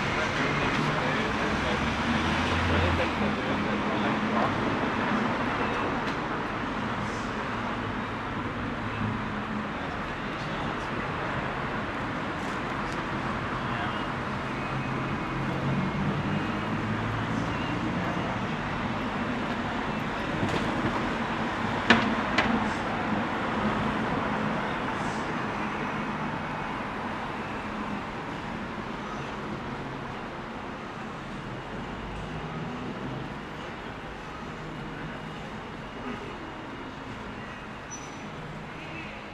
Dublin Rd, Belfast, UK - Dublin Road
Recording in front of two bars (Filthy’s and The Points), busy street with many passerby and vehicle traffic. This is a day before Lockdown 2 in Belfast.
Northern Ireland, United Kingdom, 15 October 2020, ~18:00